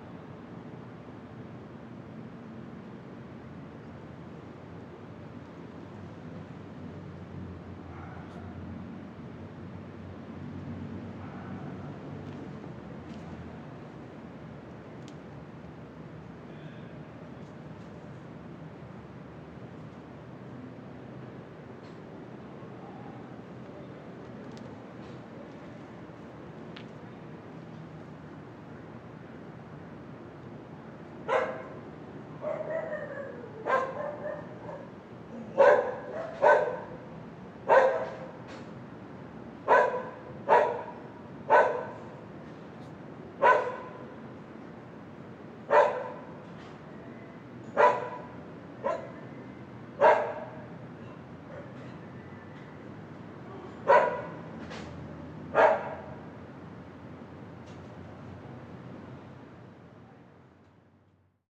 a, Diagonal 39b Sur, Bogotá, Colombia - Park N ° 1 New Villa mayor
Neighborhood Park No.1 New Villa mayor. We can hear the sound of the night city, very close by the neighborhood watchman who circulates through the neighborhood on a cycle with his whistle, we can hear some people talking very far away and a dog barking.
16 May 2021, 21:00